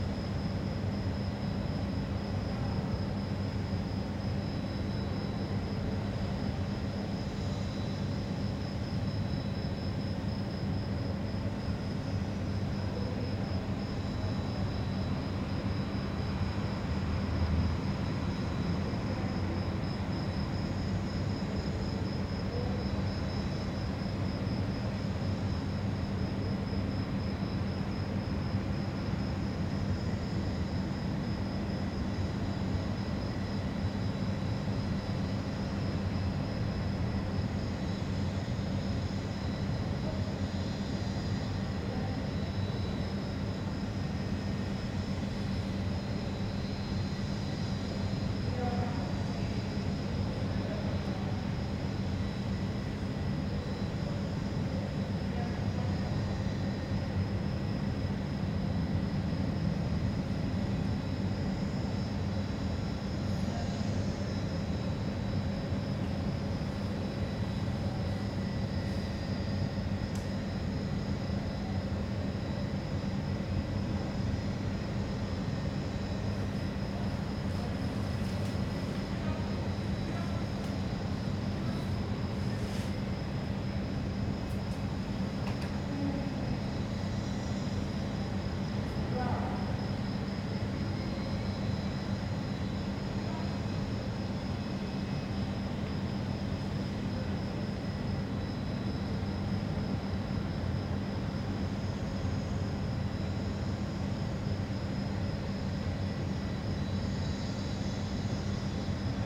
Night drones, insects, distant voices.
Telinga Parabolic stereo mic. Dat recorder